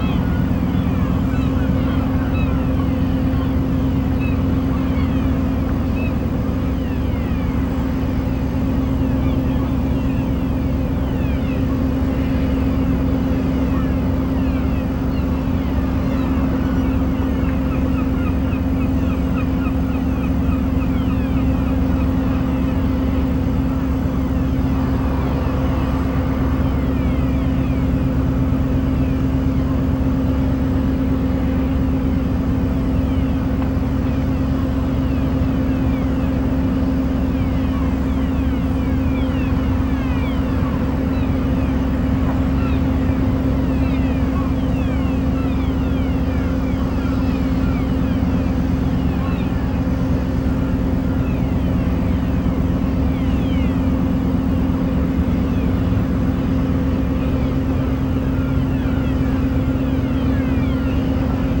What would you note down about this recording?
Dunkerque, Port Freycinet, Mole 4, noises from repair docks across the basin. 2 x Rode NT2A, RME Quadmic, EMU 1616.